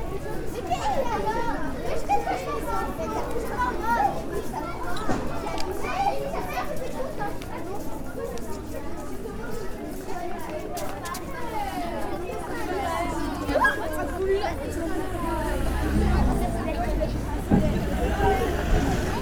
L'Hocaille, Ottignies-Louvain-la-Neuve, Belgique - Folowing children
Following children, from the main place of Louvain-La-Neuve, to the Blocry swimming pool. Sometimes, they are singing. A young child noticed me and said : wow, he's not allowed to record us ;-) He was 6-7 years old and I was discreet !
Walking with them was very enjoyable.
Ottignies-Louvain-la-Neuve, Belgium